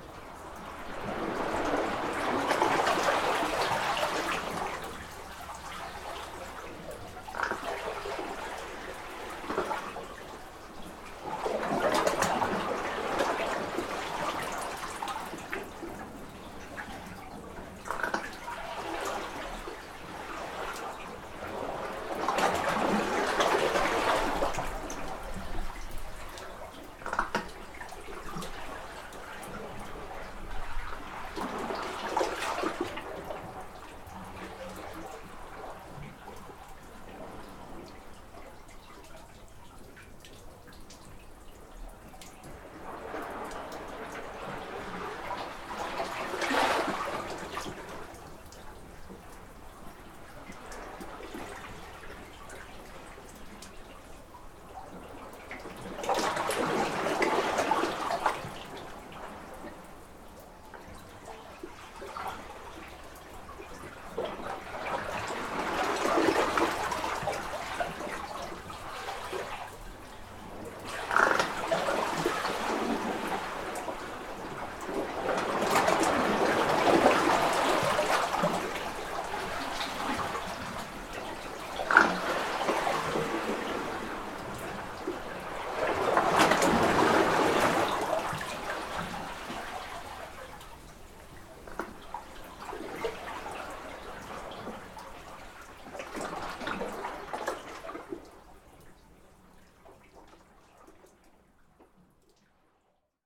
Coz-pors, Trégastel, France - Burping Water under a small cave [Coz-pors]
Les vagues font bloupbloup dans une petite cavité sous un rocher.
The waves are glougloubin a small cavity under a rock.
April 2019.
/Zoom h5 internal xy mic